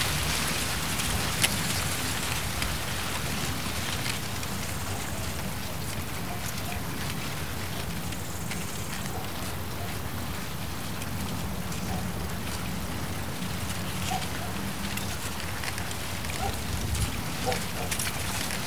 Morasko - corn field
leaves of corn gently brushing against each other. on one hand a very relaxing swoosh but unsettling and ghostly on the other.